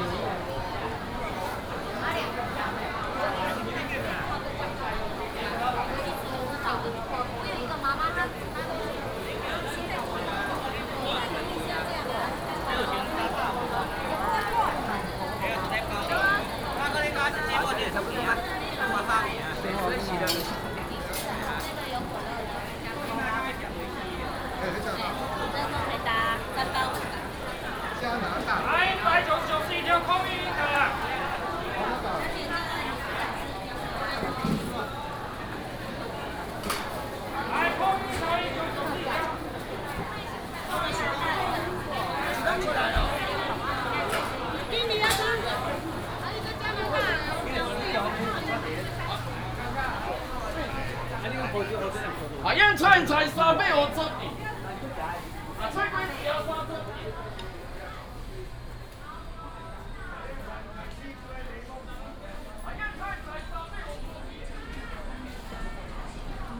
南門公有零售市場, Taoyuan City - Walking in the market
Walking in the market, Traffic sound